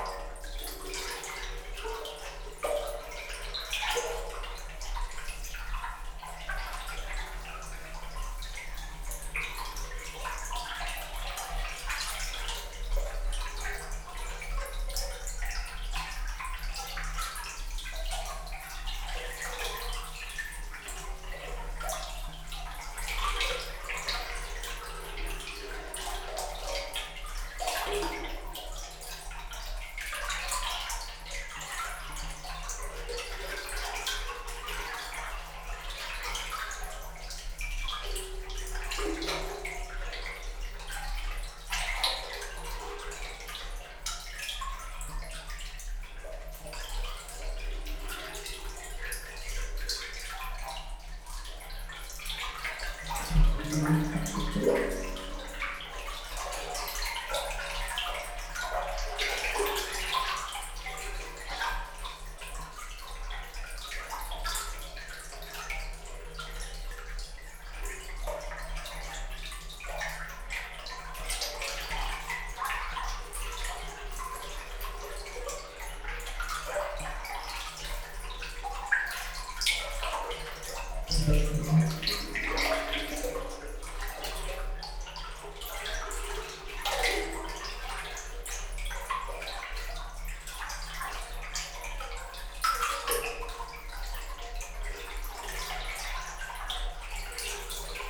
Lietzengraben, Berlin Buch, Deutschland - musical water flow in manhole
canal or underpass of Lietzengraben, a manhole in the middle, for regulating water levels. Water flows quickly and makes a musical sound within the concrete structure.
(Tascam DR-100 MKIII, DPA4060)